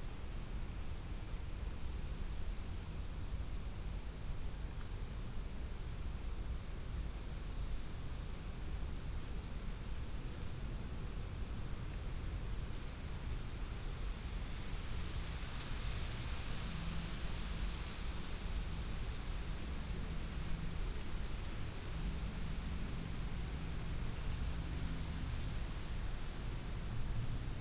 Badesee Madlow, Cottbus - wind in bush
pond in the park, no poeple around, wind in a hazelnut bush
(Sony PCM D50, Primo EM172)